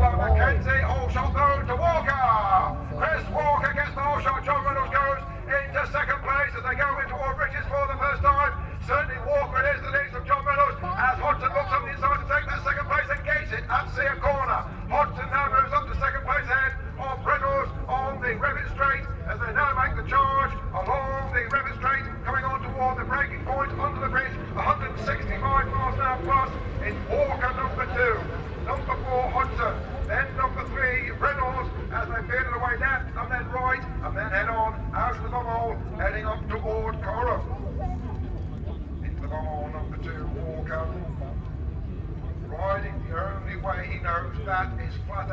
Unit 3 Within Snetterton Circuit, W Harling Rd, Norwich, United Kingdom - British Superbikes 2000 ... superbikes ...
British Superbikes ... 2000 ... race two ... Snetterton ... one point stereo mic to minidisk ... time approx ...